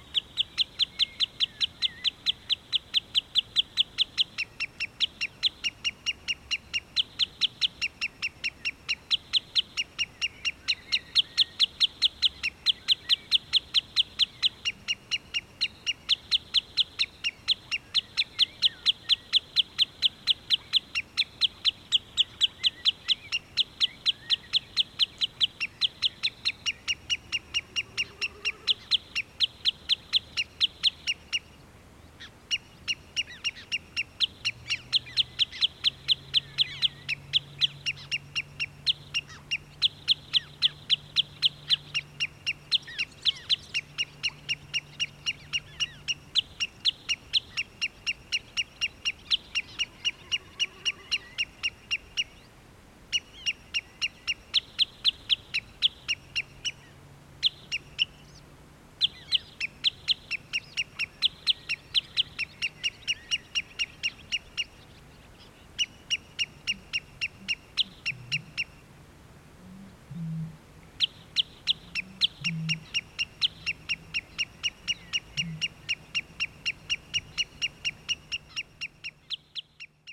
I made this recording simultaneously with John, ca 50 meters from his mics. My mics were appointed east or north. I hoped that a wader we saw earlier, will come near mics. He did, but maybe too near :) In Johns recording the piping of the wader is not so loud.